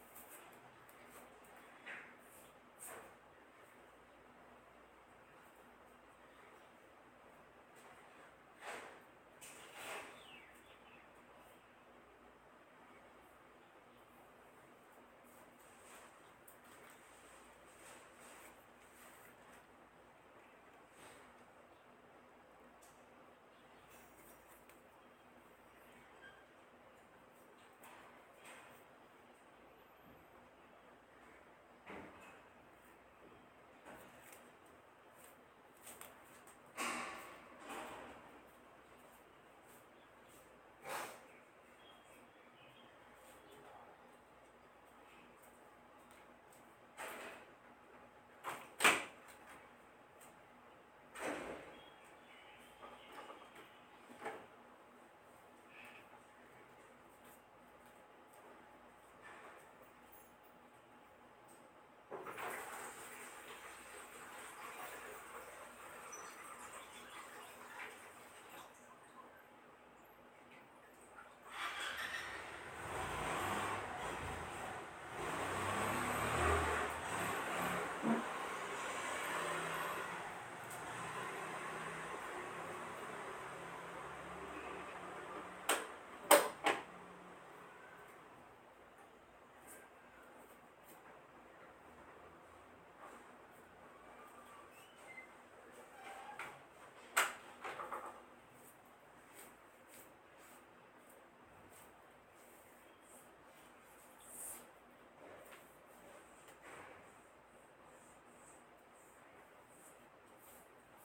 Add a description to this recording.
place: Where I live with my wife, people here is nice; the main street 大埔路(Da-pu Rd.) have most stores which provide our living, includes post office and 7-11. But the site I take this recording, which is my rented house, doesn't have any stores in the community, and very, very quiet, that you can heard it from the recording I take. recording: Don't have much sounds, except someone is ready to drive and argue with his wife's door slang of the car, or riding on the motorcycle which is popular down here(Taiwan). situation: A carless morning, and it's just few people outside go for job or school, most of the people nearby is staying home doing their business, which is sleep taking, house work doing, or net-surfing through the smart phone.